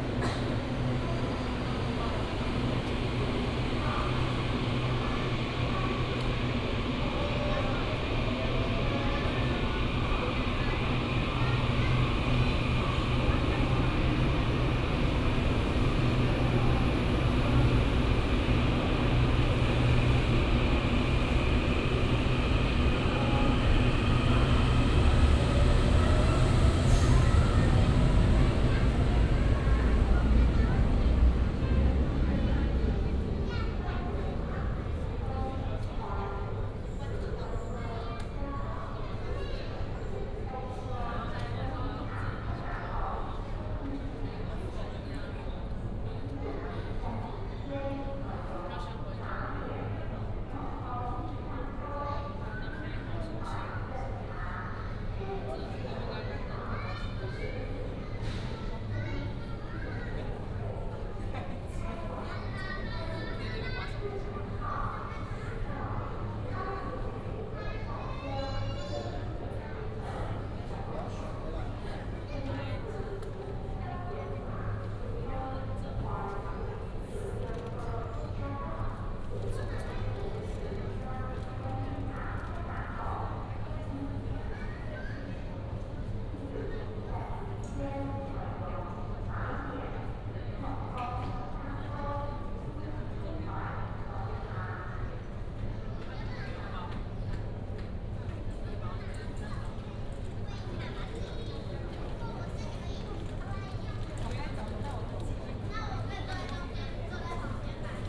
桃園火車站, Taoyuan City, Taiwan - in the station platform
in the station platform, Station information broadcast, The train passed
22 December 2017, ~15:00